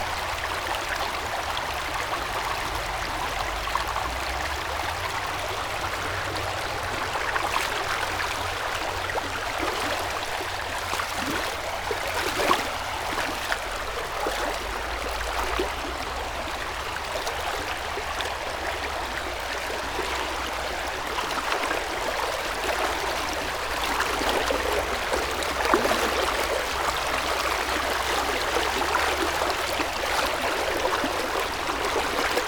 river Drava, Loka - river flow, walking
Starše, Slovenia, 28 September 2014, 13:10